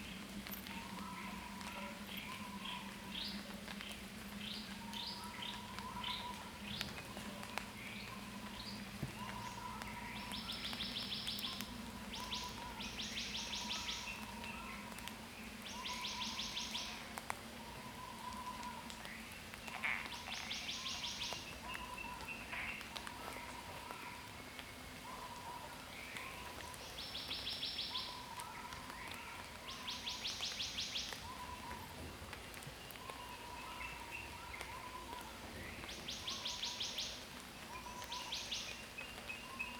桃米里, Puli Township, Nantou County - bamboo forest
Birds called, bamboo forest
Zoom H2n MS+XY
Puli Township, Nantou County, Taiwan, 6 May 2016